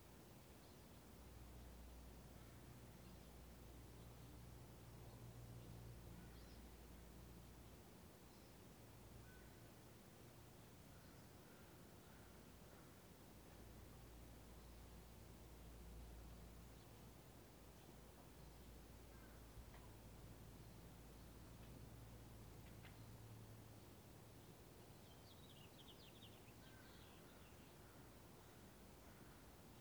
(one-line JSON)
{"title": "Sherwood Forest - Spring", "date": "2002-04-23 13:41:00", "description": "Dappled sunlight on a spring afternoon brings peaceful tranquility to the 'burbs, living here in status symbol land.\nMajor elements:\n* Leaf blowers\n* Lawn mowers\n* Birds, dogs, insects\n* Planes, trains & automobiles\n* Distant shouts from the elementary school playground\n* My dog settling down in the sun", "latitude": "47.79", "longitude": "-122.37", "altitude": "106", "timezone": "America/Los_Angeles"}